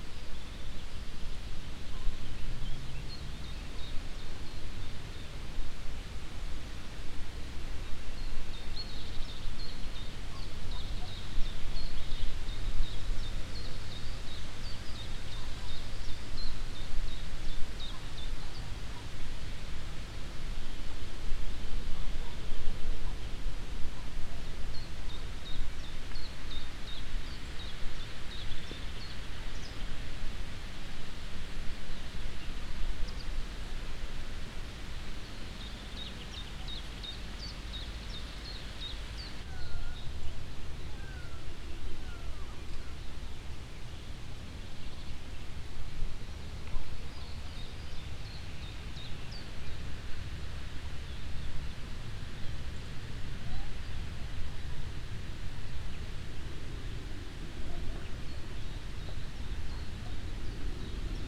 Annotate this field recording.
In the morningtime on a mild sunny summer day at a lake. The sounds of the morning birds, wind waves crossing the lake and mving the reed, a plane crossing the sky. international sound ambiences - topographic field recordings and social ambiences